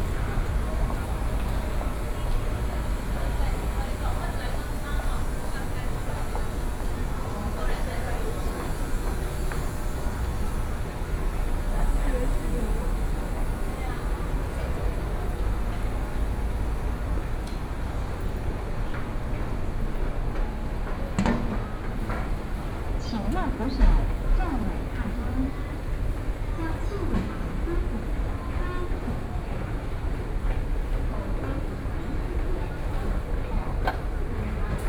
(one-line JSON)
{"title": "Nanjing Fuxing Station, Taipei City - Walking into the MRT station", "date": "2014-05-02 12:35:00", "description": "Walking on the road, Traffic Sound, Walking into the MRT station", "latitude": "25.05", "longitude": "121.54", "altitude": "24", "timezone": "Asia/Taipei"}